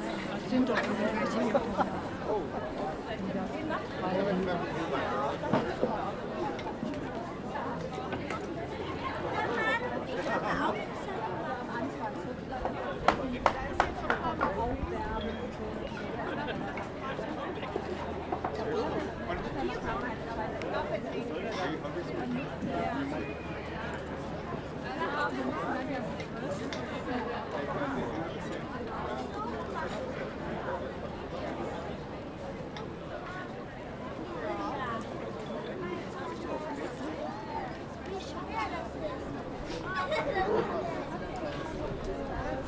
Siegburg, Deutschland - Mitelalterlicher Weihnachtsmarkt Kinderkarussell / Medieval Christmas market children´s carousel
Auf dem mittelalterlichen Weihnachtsmarkt (ohne Weihnachtsmusik!) vor dem handgetriebenen Kinderkarussell.
In the medieval Christmas market (excluding Christmas music!) In front of the hand-driven children's carousel.